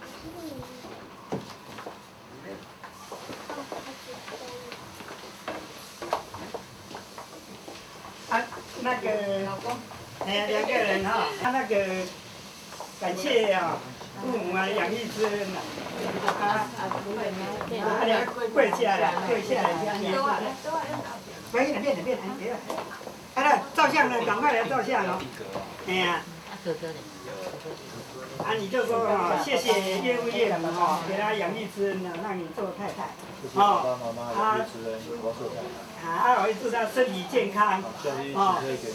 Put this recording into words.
Traditional Wedding Ceremony, Sony Hi-MD MZ-RH1 +Sony ECM-MS907